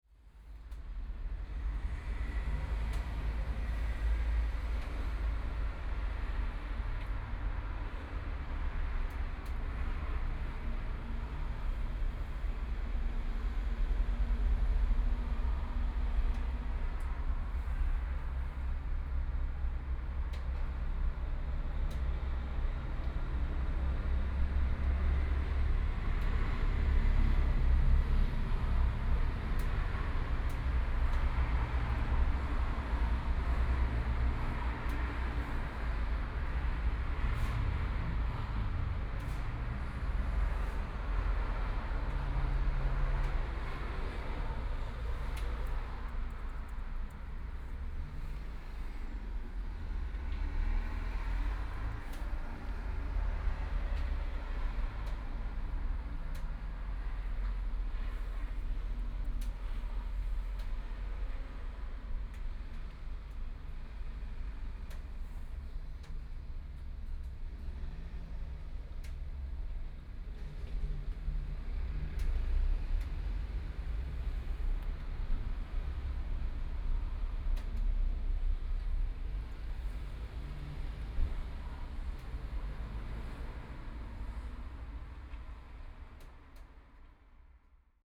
Krone Hotel, Munich - in front of the Hotel
in front of the Hotel, The sound of raindrops, Traffic Sound
Munich, Germany